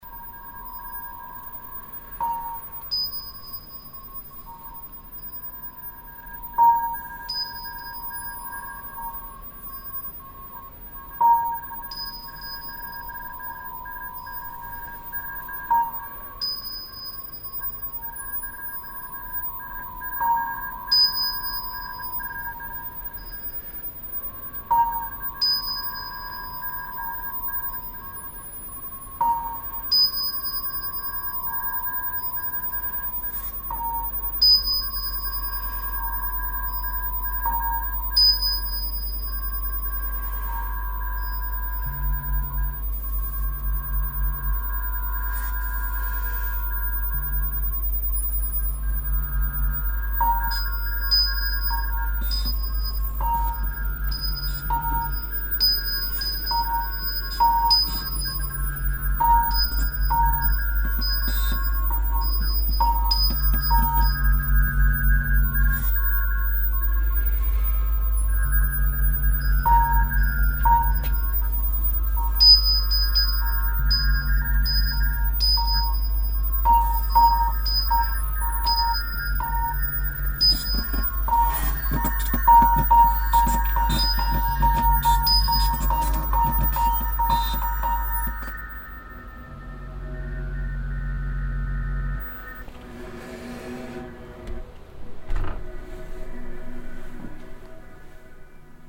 lippstadt, light promenade, installation the mediator
the installation is part of the project light promenade lippstadt curated by dirk raulf
further informations can be found at:
sound installations in public spaces